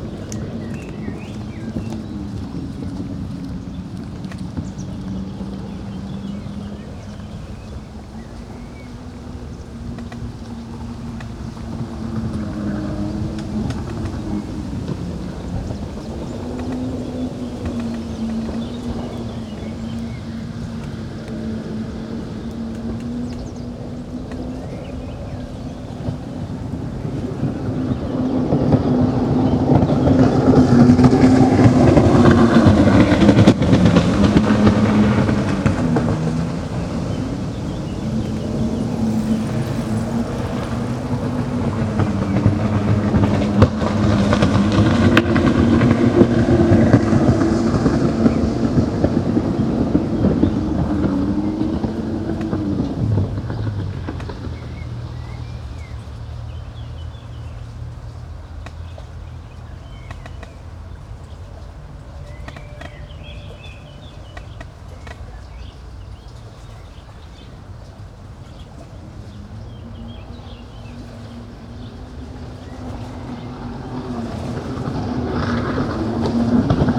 i stopped to record a creaking tree just above the riverside path, but the recording was quickly dominated by a jetskier flying back and forth and back and forth under the nearby bridge
Maribor, Slovenia